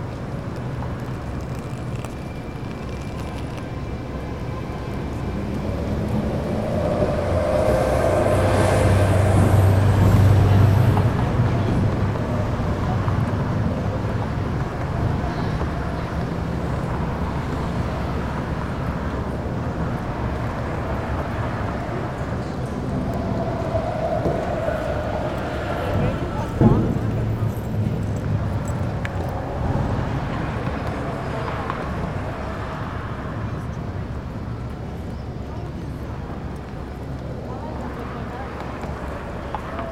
West Loop Gate, Chicago, IL, USA - Nearing Union Station, Chicago (Urban ambiance)
Simple recording of downtown ambiance near Chicago's Union Station. Just me with a Tascam DR-07 set up on a concrete ledge, peering out towards cabs and bikers racing down one of the city's busier avenues. Used a wind screen and low cut filter, was around 9 AM in the middle of July morning commute. You can also hear people walking past and pulling luggage on rollers and little bits of their conversations.